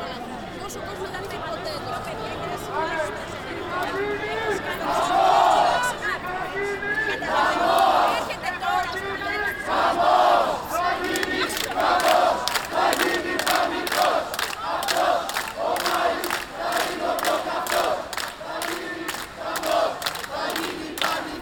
Athens. People demonstrating on their way to parliament. - 05.05.2010
Center, May 2010